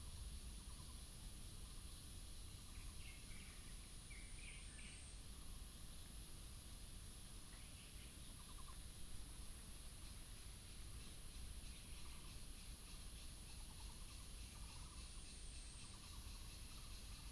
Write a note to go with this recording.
Small countryside, Birds sound, Cicada cry